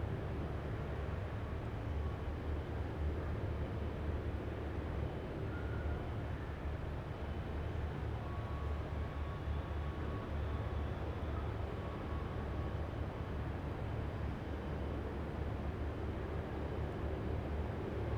Atmosphere mid tunnel under Southwark Bridge, Queen Street Place, London, UK - Midtunnel under Southwark Bridge, atmosphere, 2 joggers
Utterly constant sonic atmosphere of the foot tunnel under Southwark Bridge. There are some nice historic pictures of the bridge and the area in tiles on the walls. The distant bleeps are from the City of London waste site nearby. Two lunchtime joggers and a woman with a dog pass during the recording.
England, United Kingdom